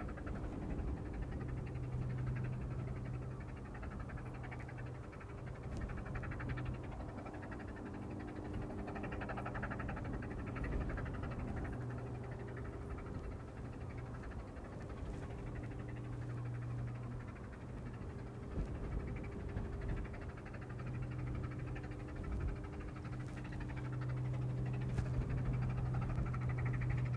Distant whistle, power station hum, puffing steam train, Ness Cottage, Dungeness Rd, Dungeness, Romney Marsh, UK - Distant whistle, power station hum, puffing steam train
Archetypical Dungeness atmosphere. The quiet but ever present drone of the nuclear power station temporarily broken by a passing Romney, Hythe and Dymchurch Railway miniature steam engine pulling its rattling train of carriages.
South East England, England, United Kingdom